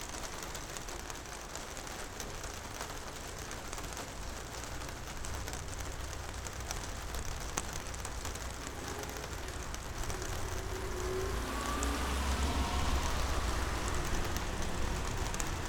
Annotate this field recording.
rain drops on plastic roof + street noise